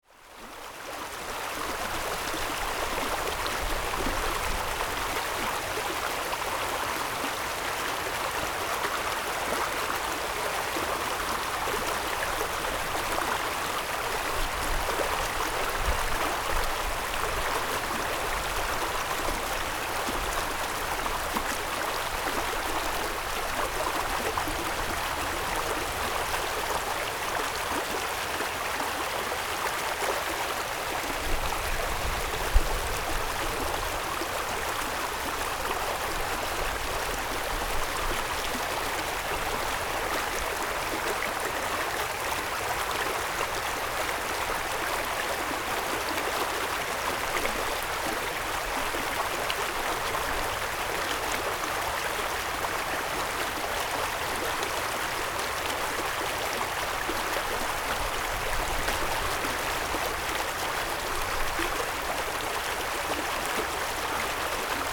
台東市東海濕地公園 - The sound of water
The sound of water, Zoom H6 M/S, Rode NT4